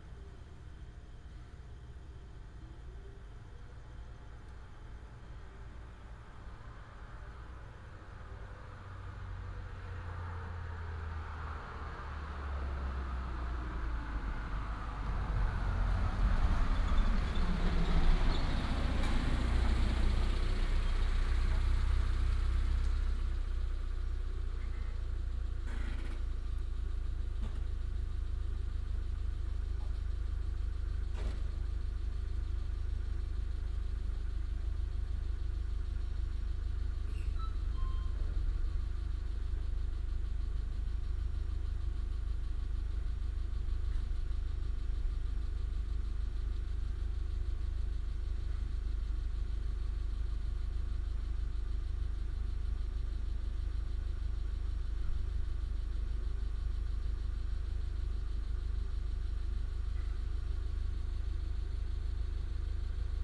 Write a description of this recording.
Morning road, Outside the convenience store, Binaural recordings, Sony PCM D100+ Soundman OKM II